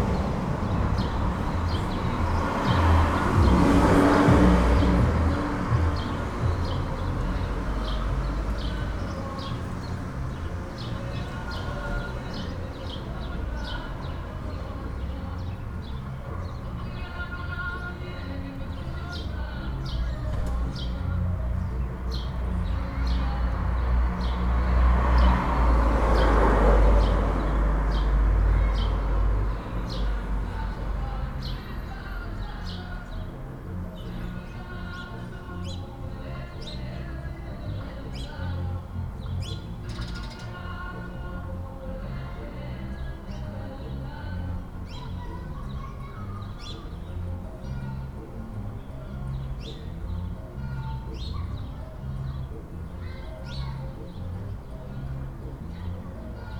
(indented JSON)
{
  "title": "Chapin Ave, Providence, RI, USA - Sunny Saturday Afternoon Neighborhood Ambience",
  "date": "2021-04-10 13:22:00",
  "description": "I made this recording at my front window, listening out to the neighborhood on a sunny and warm Saturday afternoon in April. People are starting to come back to life in the neighborhood. Someone playing music down the street with a peculiar reverberation. Traffic including cars, skateboards, motorcycles, people walking. The hounds down the street barking. The motorcycle at 1:30 is loud and distorted, totally clipped. I left it in because it portrays the feeling accurately. Recorded with Olympus LS-10 and LOM mikroUši",
  "latitude": "41.81",
  "longitude": "-71.43",
  "altitude": "3",
  "timezone": "America/New_York"
}